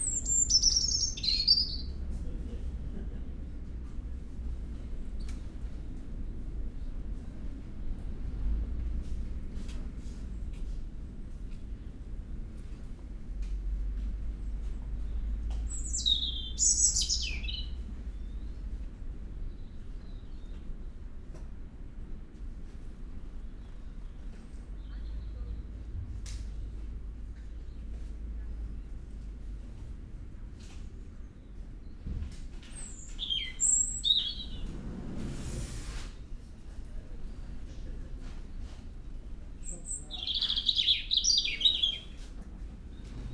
resident robin singing ... Reighton Nurseries ... the birds is resident and sings in the enclosed area by the tills ... it is not the only one ... lavalier mics clipped to bag ... background noise and voices ... the bird can negotiate the sliding doors ...